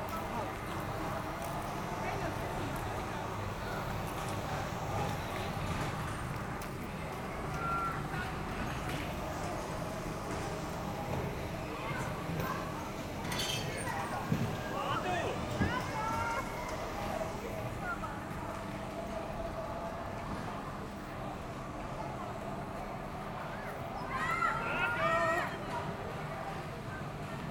Gyumri, Arménie - Attraction park
Children, playing in an attraction park. Bumper cars and roller coaster. Armenian people is so kind that in the bumper cars area, they don't cause accidents ! This park so ramshackle, welcoming very poor people, that I was near to cry.